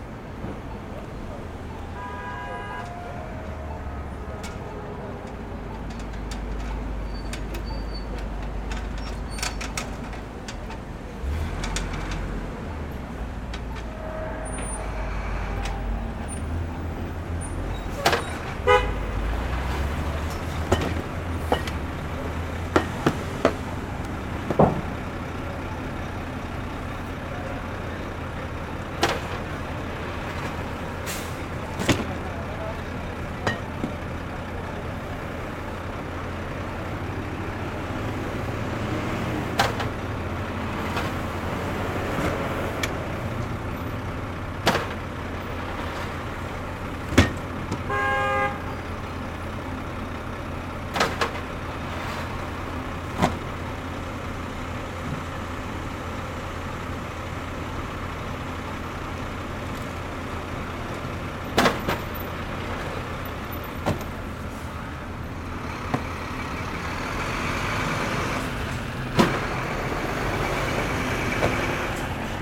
Sounds from a worker unloading cases of beverages from a truck.
United States, 30 March 2022, ~11:00